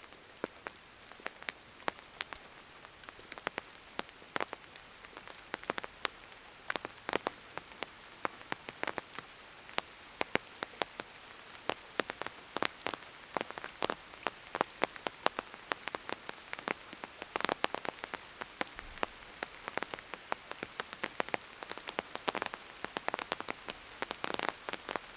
Berlin: Vermessungspunkt Friedel- / Pflügerstraße - Klangvermessung Kreuzkölln ::: 19.09.2012 ::: 02:18
Berlin, Germany, 19 September 2012, ~02:00